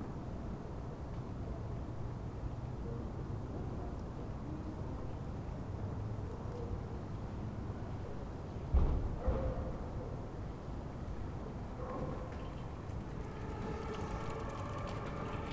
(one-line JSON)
{"title": "Berlin: Vermessungspunkt Friedel- / Pflügerstraße - Klangvermessung Kreuzkölln ::: 02.11.2010 ::: 23:57", "date": "2010-11-02 23:57:00", "latitude": "52.49", "longitude": "13.43", "altitude": "40", "timezone": "Europe/Berlin"}